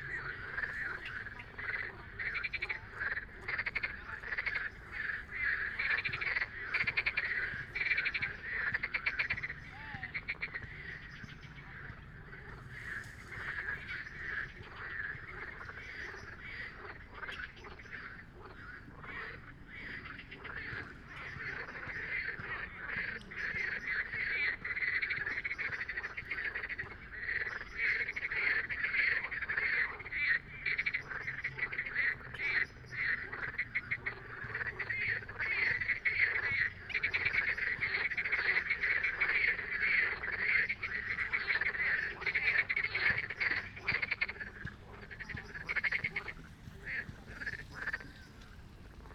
Hálova, Petržalka, Slovakia - Pelophylax ridibundus
Distant high pitched cries of common swifts, insects, runners, basketball practice, sirens, omnipresent humming cars, scooters, random snippets of conversations, but most importantly: impressive crescendos of marsh frogs, vocalizing in explosive waves amidst the Bratislava's soviet-era panel-house borough.